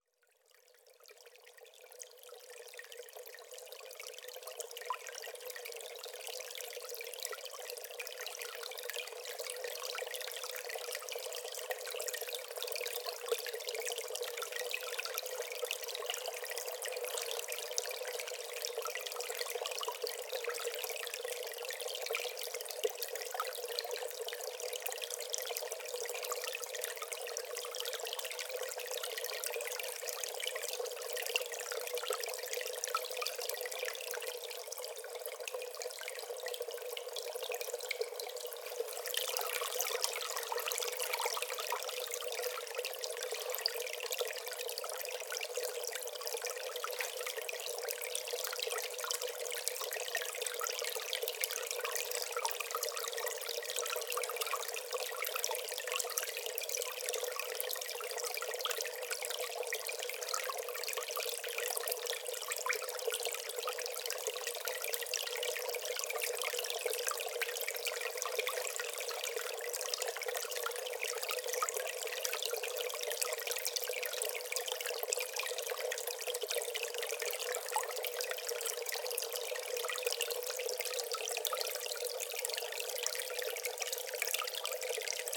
Eastman Hall, Ithaca, NY, USA - Water Under the Bridge
Gentle stream recorded with two omnidirectional mics positioned on either side of the stream and hard panned to the left and right.
New York, United States, 17 February 2021